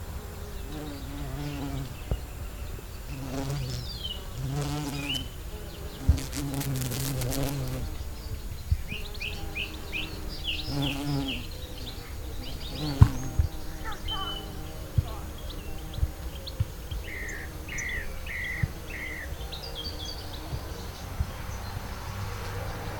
Piddle Valley School - Bees in the lavender garden
Bees buzzing around the lavender garden. Children playing and a road in the distance.
Recorded on an H4N zoom recorder and NTG2 microphone.
Sounds in Nature workshop run by Gabrielle Fry.
Dorset, UK, 15 July 2015, 16:30